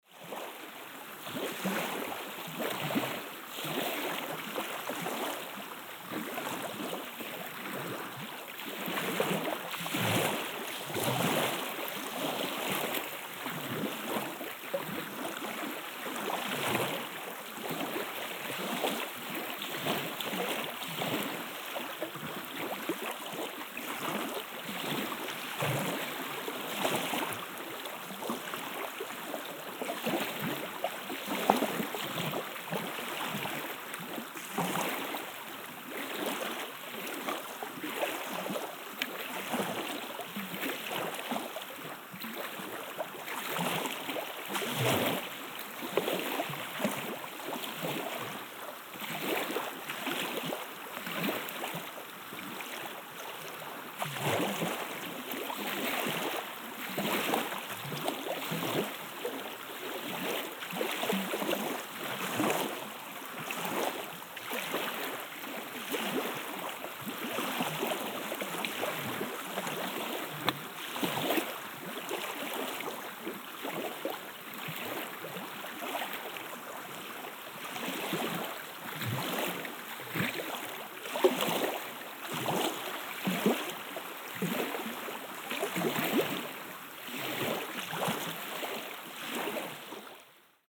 Sound of the surf, Russia, The White Sea. - Sound of the surf.
Cape Vazhennavolok. Sound of the surf.
Мыс Важеннаволок. Шум прибоя.